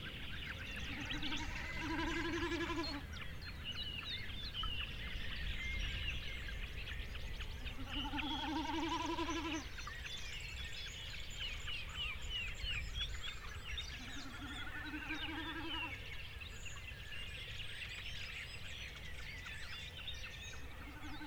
Birdlife on swampy riverside of Lemmjõgi, Estonia - Morning on river floodplain
Windy morning on riverplain. Great Snipe, distant thrushes etc
Suure-Jaani vald, Viljandi maakond, Eesti, 1 May 2013